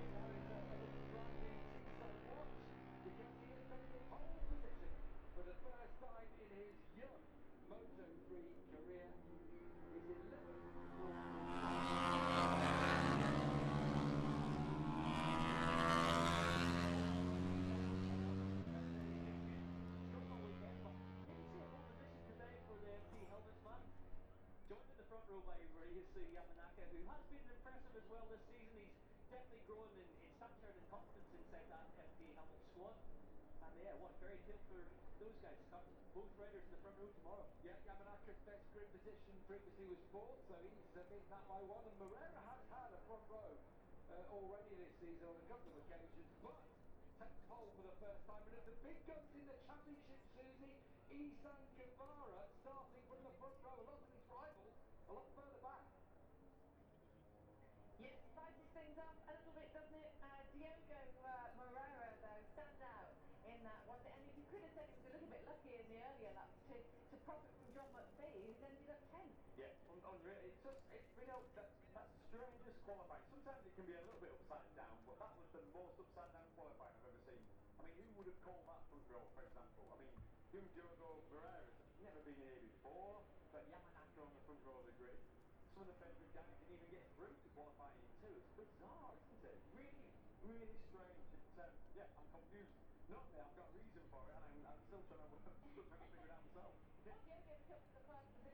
Silverstone Circuit, Towcester, UK - british motorcycle grand prix 2022 ... moto three ...
british motorccyle grand prix 2022 ... moto three qualifying two ... zoom h4n pro integral mics ... on mini tripod ...
East Midlands, England, United Kingdom, 6 August 2022, 13:00